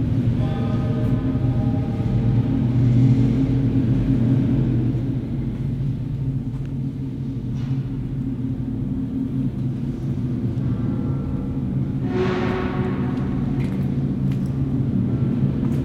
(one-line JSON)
{"date": "2009-05-21 16:18:00", "description": "urban exploring in old Riga power station (binaural) Latvia", "latitude": "56.97", "longitude": "24.09", "altitude": "10", "timezone": "Europe/Riga"}